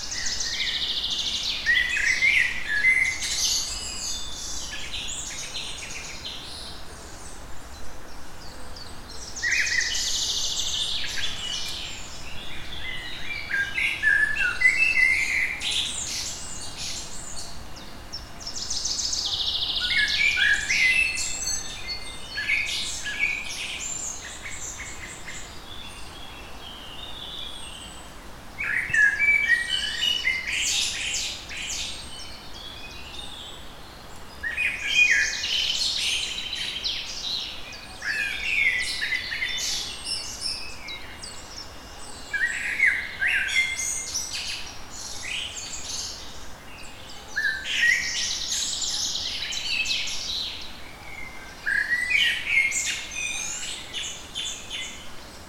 Pszczelnik Park, Siemianowice Śląskie, Polska - Morning birds
Birds in the park.
Tascam DR-100 (UNI mics)
Siemianowice Śląskie, Poland, 1 May 2019